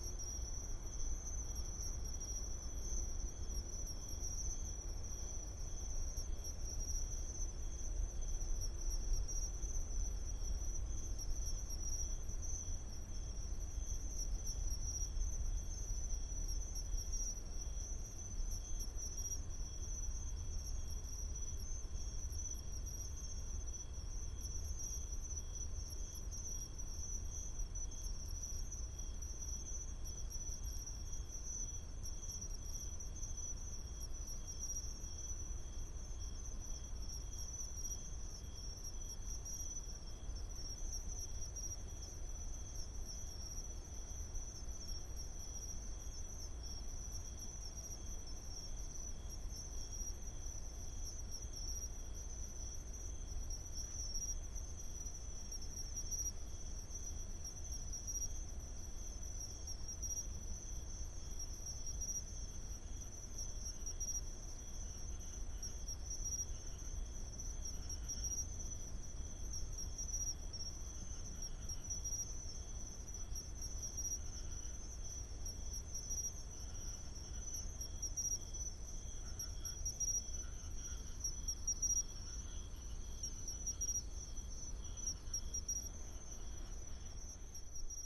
觀音區中興路, Taoyuan City - Insects sound
Insects, Traffic sound, Late night street, Binaural recordings, Sony PCM D100+ Soundman OKM II